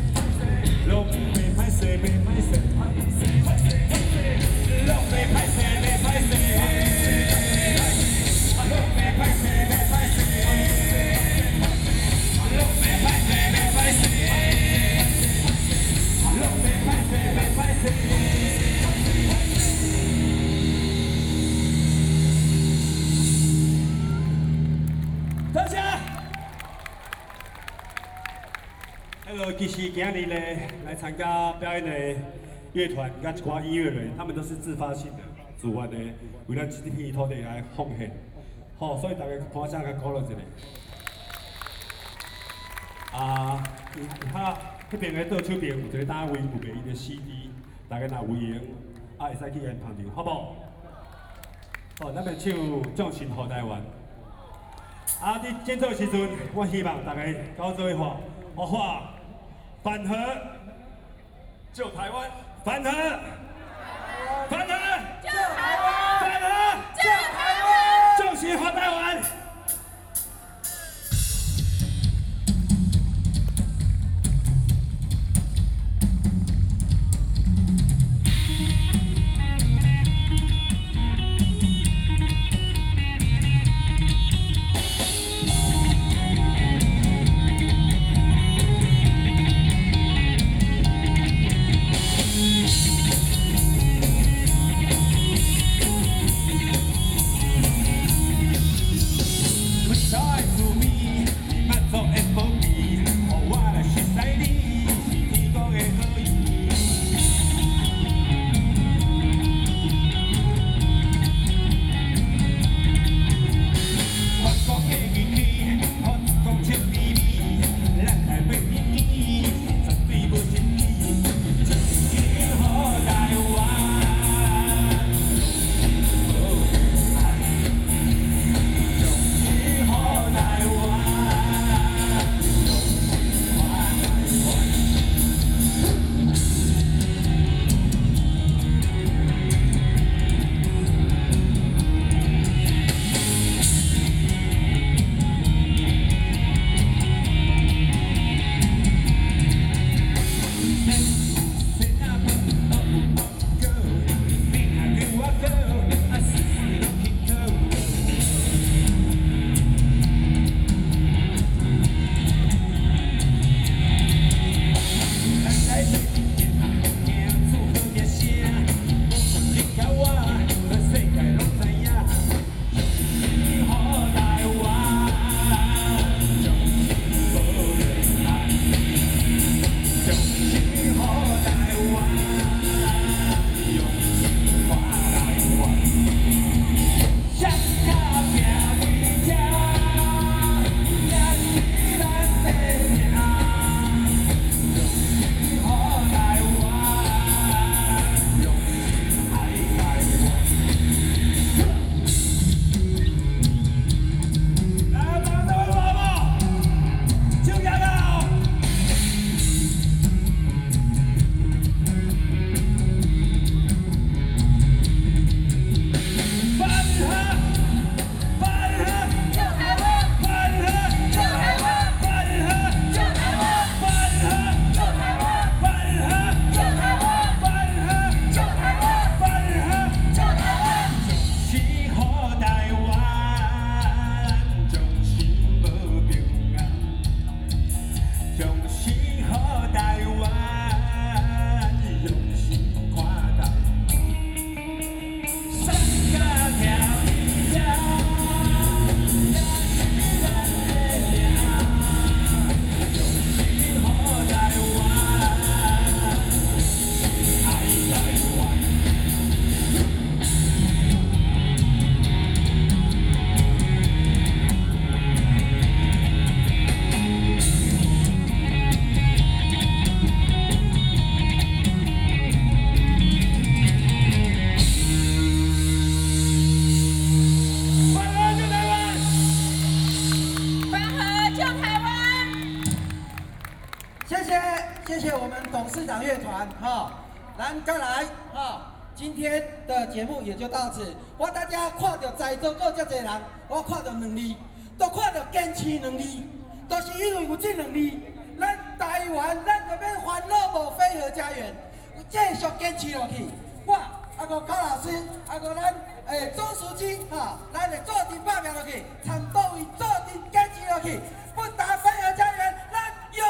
Ketagalan Boulevard, Taipei - anti-nuclear protester
rock band The Chairman, Zoom H4n+ Soundman OKM II